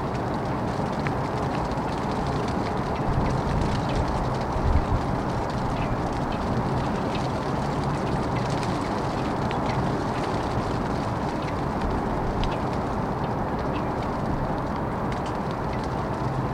Flag Pole during wind
Flag, pole, wind and rain
Port Talbot, Neath Port Talbot, UK